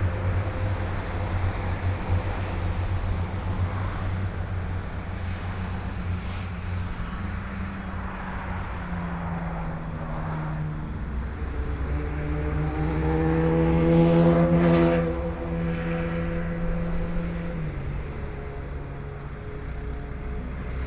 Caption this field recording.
Roadside rest area, Traffic Sound, Sound waves, Train traveling through